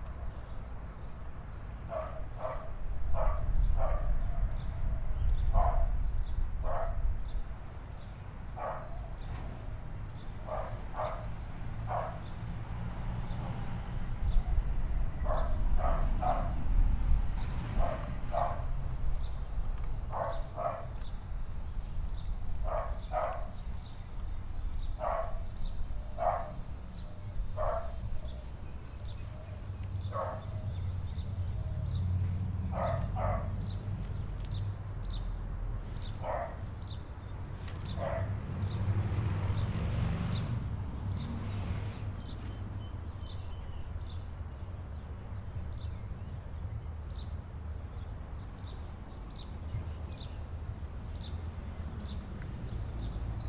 a backyard on Pooley St